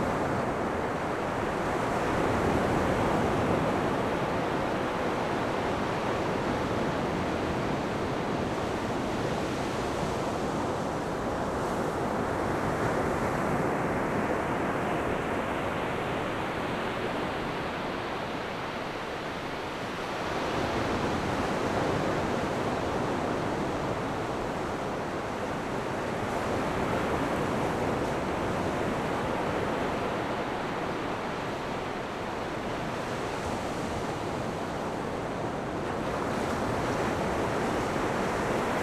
坂里沙灘, Beigan Township - At the beach
At the beach, Sound of the waves
Zoom H6 +RodeNT4